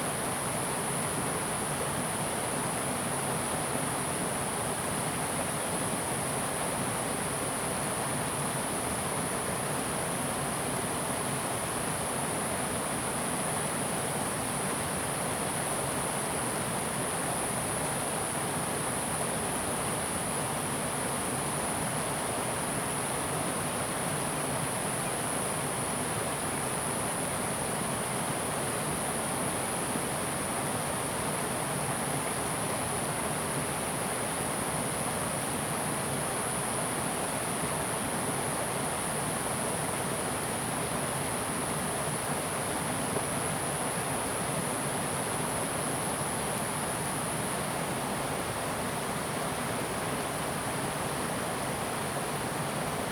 Sound of water, Insect sounds, River, In the center of the river
Zoom H2n MS+XY